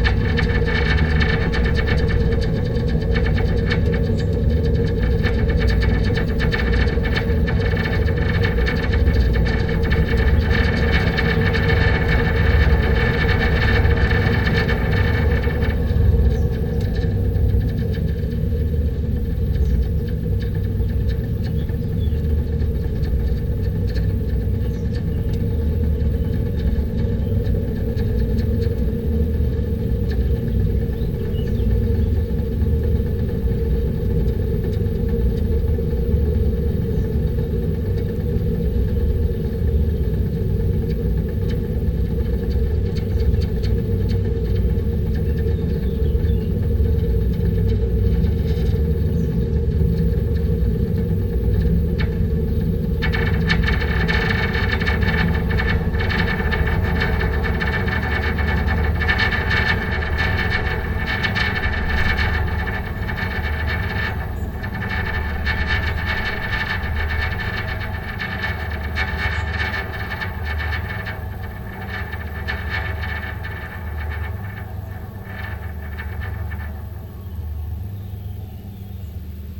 Stazione Topolo 1999, high tension cable, Italy
Grimacco UD, Italy